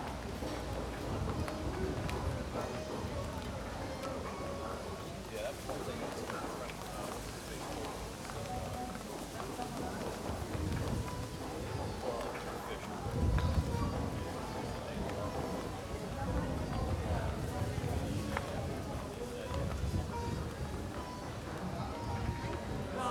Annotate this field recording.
around this area restaurants and bars are known for fado performances. a lady and the owner of the place sing for the customers. recording from the street across the restaurant.